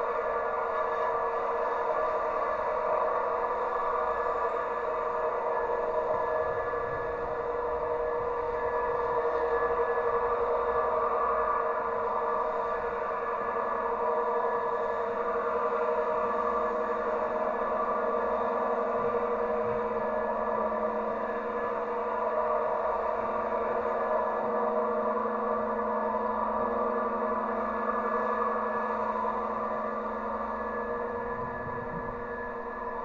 Athen, Flisvos Trokantero, tram station - tram pole singing
tram pole at Trokantero station
(Sony PCM D50, DIY stereo contact mics)
Paleo Faliro, Greece, 6 April 2016